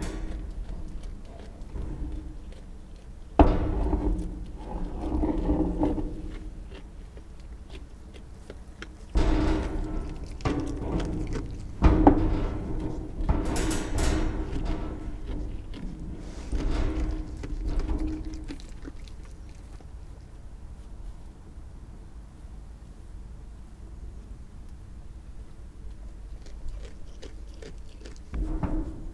Windermere, Cumbria, UK - Hebridean sheep (rams) horns' clanging on their feeding trough
This is the sound of the black Hebridean sheep rams kept at Rayrigg Hall, eating hay out of their trough and clanging their horns on the metal as they do so. Hebridean sheep are small, hardy, and wild. They are one of the breeds closely related to the primitive, pre-domesticated wild sheep. Originally concentrated on St Kilda, (a Western archipelago 40 sea miles from Scotland's most westerly isles) The Black Hebridean sheep became a favourite park animal amongst the gentry of Cumbria, who favoured them for their hardiness and exotic, multi-horned appearance. Some of the rams appear to have six horns, and they are a beautiful, very dark brown/black colour. Their fleece is characterful and hardy, like the sheep. You can't record them whilst physically being present, as they are deeply suspicious of humans that aren't their shepherd, so to make this recording I buried my recorder in their hay.
10 August 2012